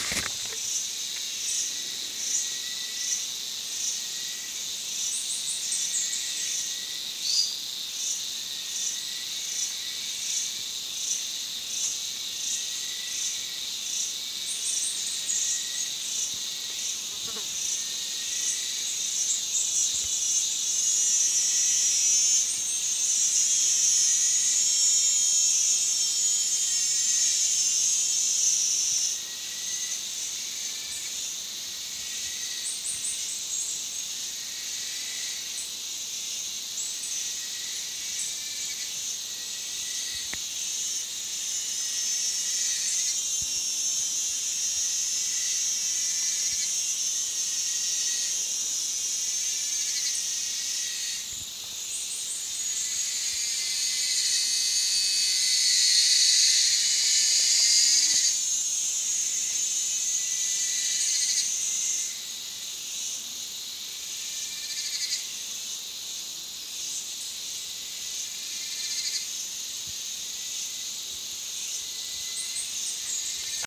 This is the sound of many cicadas at the edge of the forest in the last month of summer season.
São Paulo, Região Sudeste, Brasil, 8 March, ~10:00